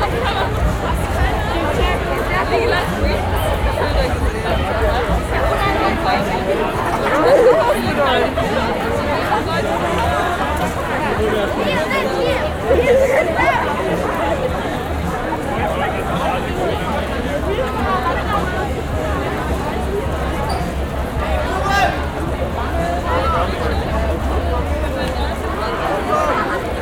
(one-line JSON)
{"title": "Dorotheenstraße, Berlin, Deutschland - climate justice", "date": "2021-09-24 13:57:00", "description": "24th of september climate march", "latitude": "52.52", "longitude": "13.38", "altitude": "47", "timezone": "Europe/Berlin"}